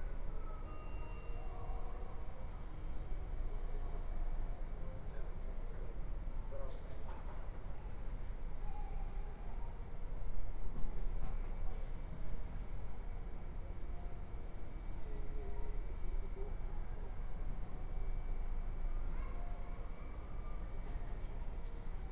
Ascolto il tuo cuore, città, I listen to your heart, city. Several chapters **SCROLL DOWN FOR ALL RECORDINGS** - Round Noon bells on Sunday from terrace in the time of COVID19, Soundscape
"Round Noon bells on Sunday from terrace in the time of COVID19" Soundscape
Chapter XXXIV of Ascolto il tuo cuore, città, I listen to your heart, city.
Sunday April 5th 2020. Fixed position on an internal terrace at San Salvario district Turin, twenty six days after emergency disposition due to the epidemic of COVID19.
Start at 11:52 a.m. end at 00:22 p.m. duration of recording 29’23”.
Torino, Piemonte, Italia, 5 April 2020